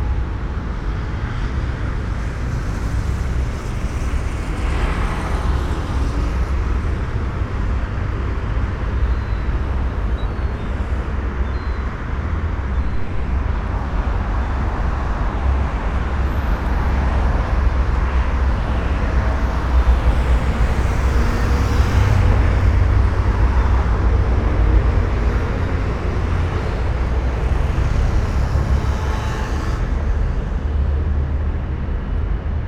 between Via Francesco Salata and Via dell'Istria, Trieste - heavy car traffic and seagulls
stairs walk from Via Francesco Salata to Via dell'Istria
Trieste, Italy, 6 September 2013, ~18:00